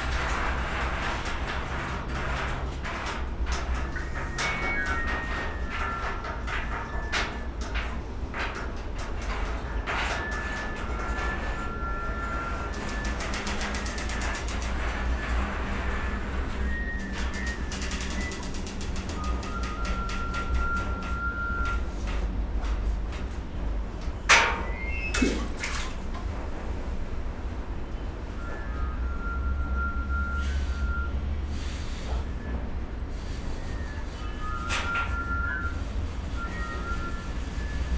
water drain action at Santralistanbul campus
playing a water drain during the new maps of time workshop